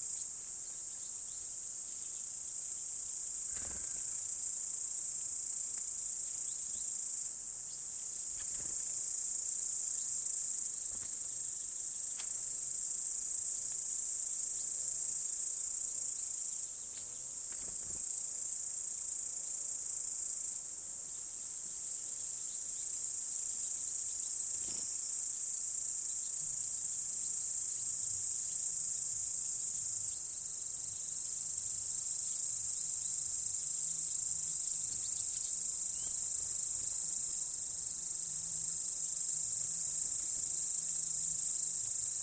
Seliste crickets and birds
evening crickets and bird sounds
Pärnumaa, Estonia, 18 July